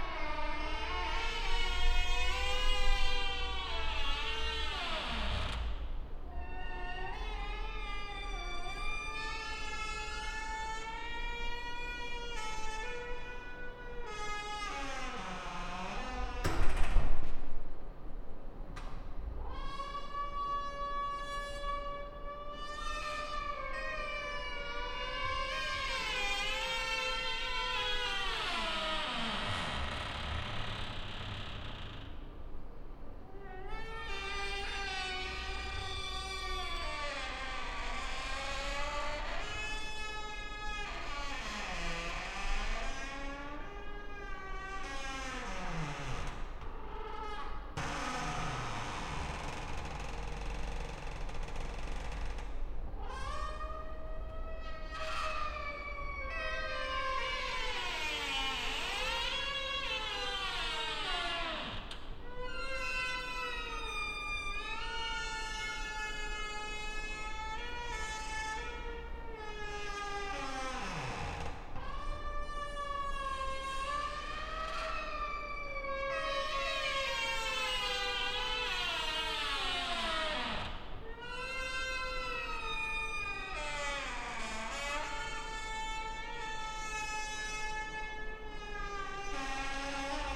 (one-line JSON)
{"title": "Krügerstraße, Mannheim, Deutschland - Favourite door A 2", "date": "2021-07-30 14:10:00", "description": "Same door as A1 but recorded with a Sound Devices 702 field recorder and a modified Crown - SASS setup incorporating two Sennheiser mkh 20 microphones.", "latitude": "49.45", "longitude": "8.52", "altitude": "94", "timezone": "Europe/Berlin"}